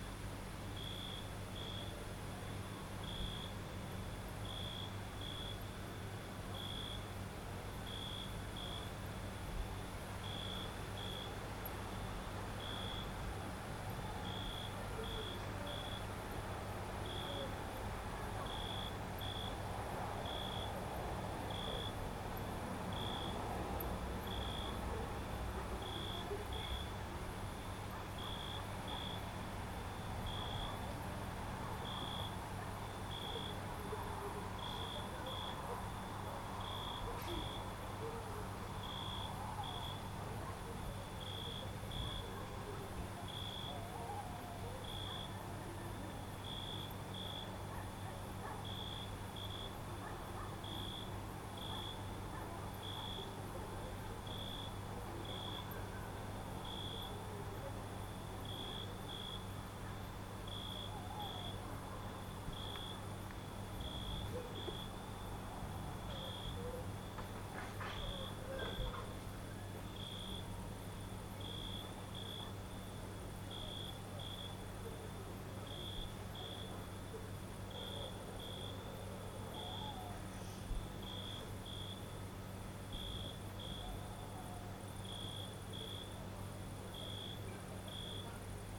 Ulupınar Mahallesi, Çıralı Yolu, Kemer/Antalya, Turkey - Nighttime
Aylak Yaşam Camp, night time sounds: owl, people, cars, dogs
30 July 2017